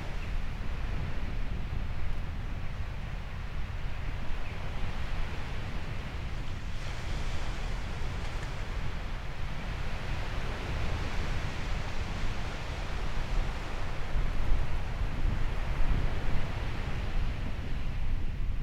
Fishermans Village, Dio-Olympos - Fishermans Village 28th of October
Sunny but wildy day.